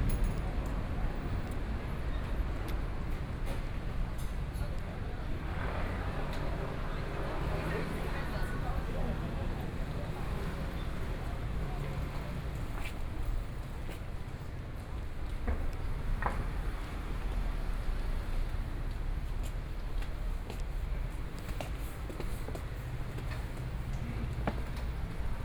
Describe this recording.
walking in the Street, Traffic Sound, Binaural recordings